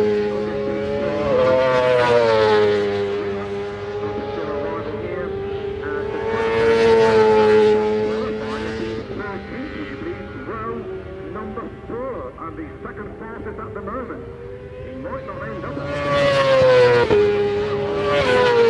Silverstone Circuit, Towcester, UK - WSB 2003... Supersports ... Qualifying ... contd ...
WSB 2003 ... Supersports ... Qualifying ... contd ... one point stereo mic to minidisk ... date correct ... time optional ...
3 June 2003